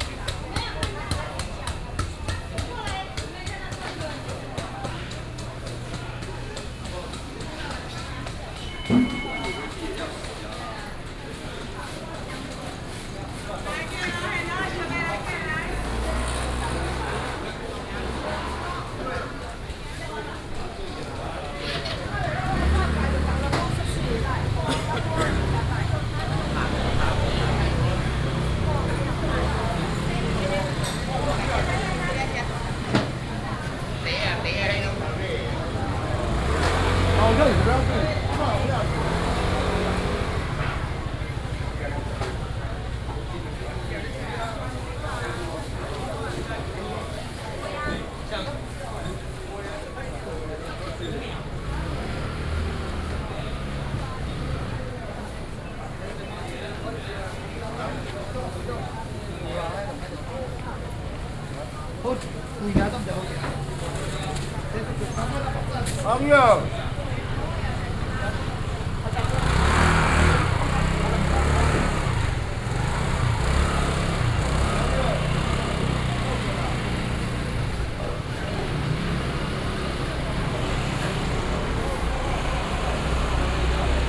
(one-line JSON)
{"title": "Xinxing Rd., Banqiao Dist., New Taipei City - Walking in the traditional market", "date": "2015-07-31 07:11:00", "description": "Walking in the traditional market, Traffic Sound", "latitude": "25.01", "longitude": "121.46", "altitude": "17", "timezone": "Asia/Taipei"}